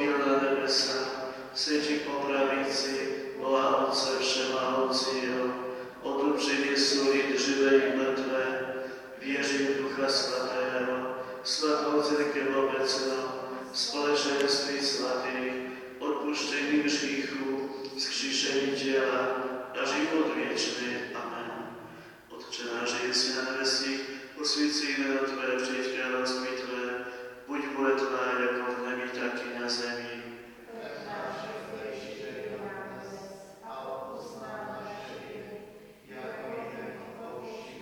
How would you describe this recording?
inside the church during the celebration of the All Saints Day